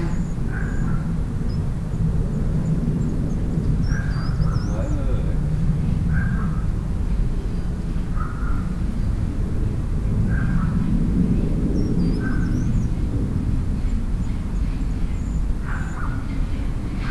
Watermael-Boitsfort - Cité-jardin Floréal Garden City